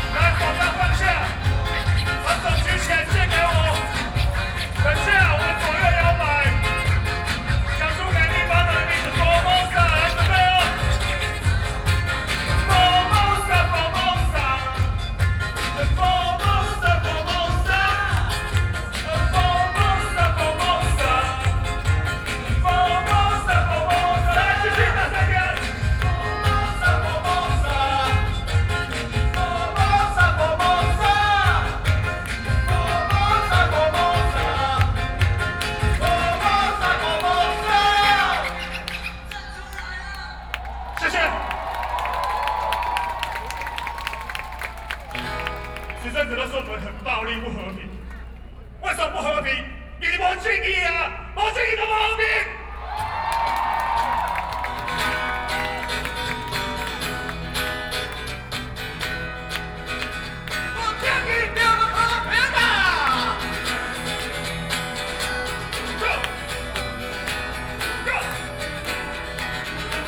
{"title": "Ketagalan Boulevard, Zhongzheng District, Taipei City - Protest", "date": "2013-08-18 21:20:00", "description": "Rock band performing songs and shouting slogans to protest, Sony PCM D50 + Soundman OKM II", "latitude": "25.04", "longitude": "121.52", "altitude": "8", "timezone": "Asia/Taipei"}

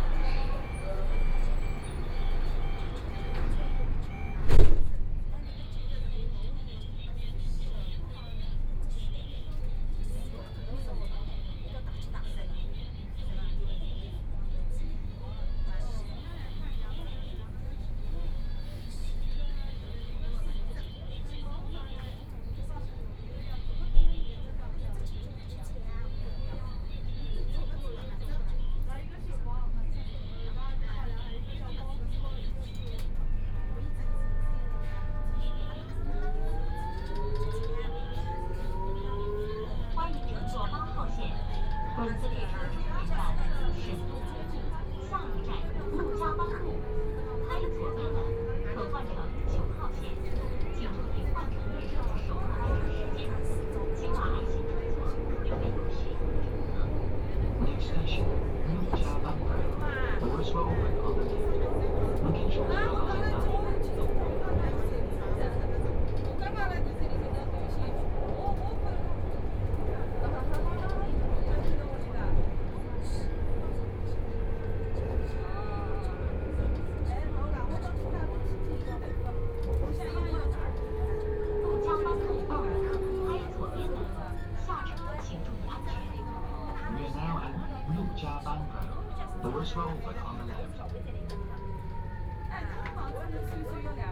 {"title": "South Xizang Road, Shanghai - Line 8 (Shanghai)", "date": "2013-11-28 13:14:00", "description": "from Laoximen Station to South Xizang Road Station, Binaural recording, Zoom H6+ Soundman OKM II", "latitude": "31.22", "longitude": "121.48", "altitude": "11", "timezone": "Asia/Shanghai"}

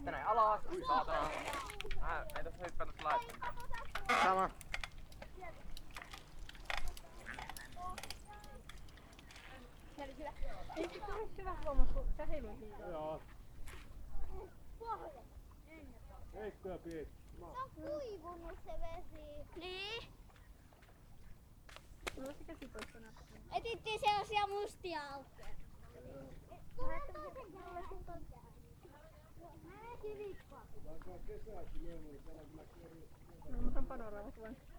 {"title": "Nallikarin majakka, Oulu, Finland - Ambiance near the lighthouse of Nallikari on a warm summer day", "date": "2020-05-24 17:11:00", "description": "People hanging around, cycling and skating around the lighthouse of Nallikari on the first proper summer weekend of 2020. Zoom H5 with default X/Y module.", "latitude": "65.03", "longitude": "25.41", "timezone": "Europe/Helsinki"}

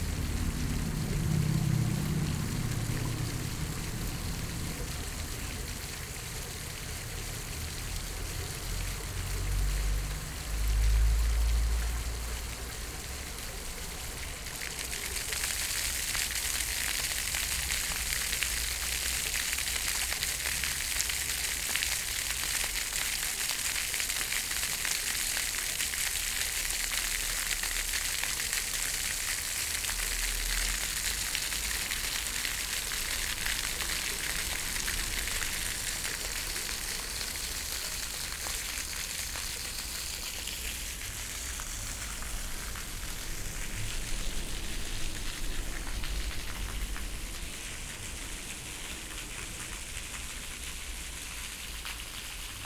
{"title": "Neukölln, Berlin, Deutschland - fountain", "date": "2013-05-26 14:05:00", "description": "fointain at Neukölln ship canal, property of nearby hotel Estrel.\nSonic exploration of areas affected by the planned federal motorway A100, Berlin.\n(Sony PCM D50, DPA4060)", "latitude": "52.47", "longitude": "13.46", "altitude": "40", "timezone": "Europe/Berlin"}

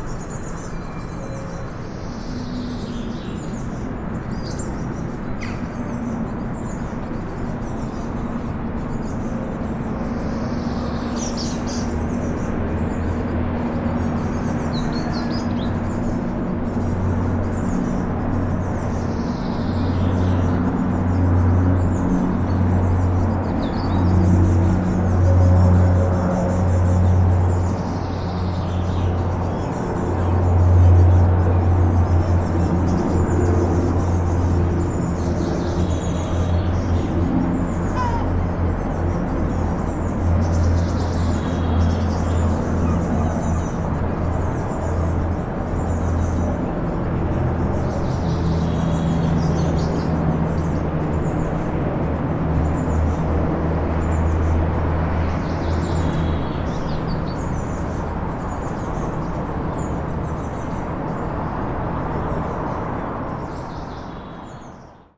{"title": "6am traffic noise from Toompea, Tallinn", "date": "2011-06-28 06:00:00", "description": "Tallinn traffic noise even at 6am in the summer", "latitude": "59.44", "longitude": "24.74", "altitude": "49", "timezone": "Europe/Tallinn"}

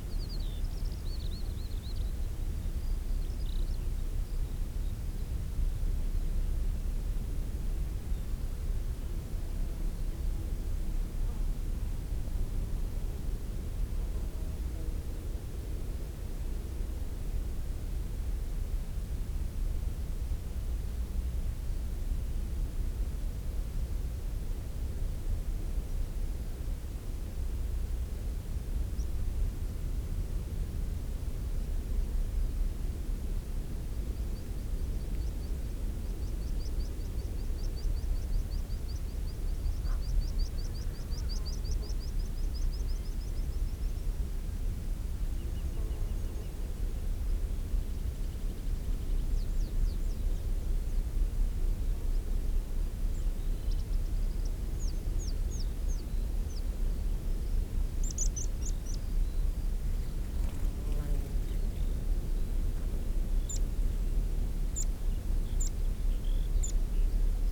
{"title": "Marloes and St. Brides, UK - Skokholm soundscape ...", "date": "2016-05-22 14:00:00", "description": "Skokholm soundscape ... bird song skylark and rock pipit ... calls form lesser black-backed gull ... crow ... open lavalier mics either side of sandwich box ... background noise ...", "latitude": "51.70", "longitude": "-5.28", "altitude": "43", "timezone": "Europe/London"}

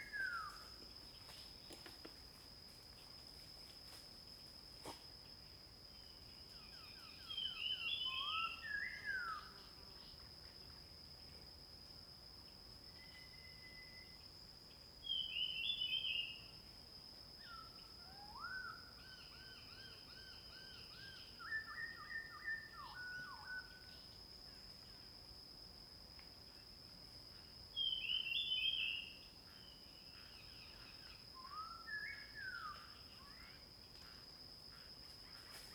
{"title": "Hualong Ln., Yuchi Township, 南投縣 - Bird calls", "date": "2016-04-26 06:12:00", "description": "Birds singing, face the woods, Dog\nZoom H2n MS+ XY", "latitude": "23.93", "longitude": "120.89", "altitude": "777", "timezone": "Asia/Taipei"}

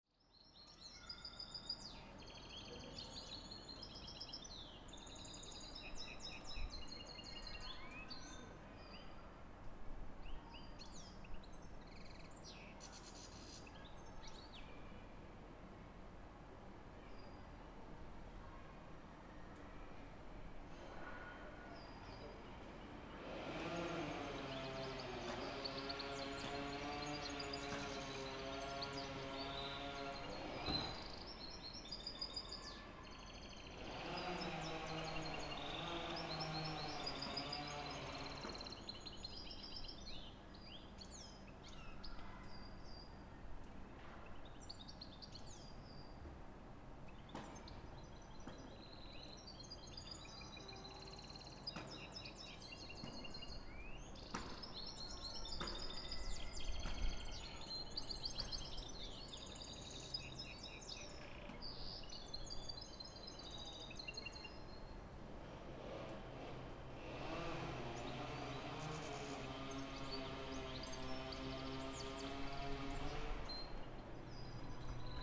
{"title": "Impasse Saint-Jean, Saint-Denis, France - Basketball Net at Impasse St Jean", "date": "2019-05-27 12:10:00", "description": "This recording is one of a series of recording mapping the changing soundscape of Saint-Denis (Recorded with the internal microphones of a Tascam DR-40).", "latitude": "48.94", "longitude": "2.36", "altitude": "33", "timezone": "Europe/Paris"}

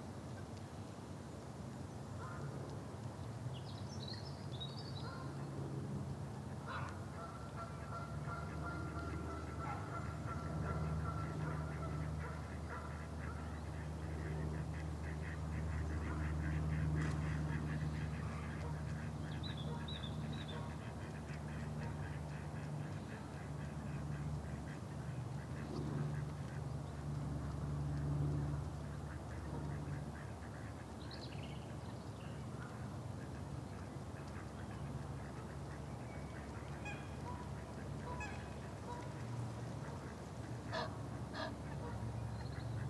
{"title": "Whiteknights Lake, University of Reading, Reading, UK - Swan skidding across water and flapping its mighty wings", "date": "2017-04-12 16:59:00", "description": "Large male swan skidding across the lake and flapping his wings to intimidate all the other birds in the vicinity.", "latitude": "51.44", "longitude": "-0.94", "altitude": "62", "timezone": "Europe/London"}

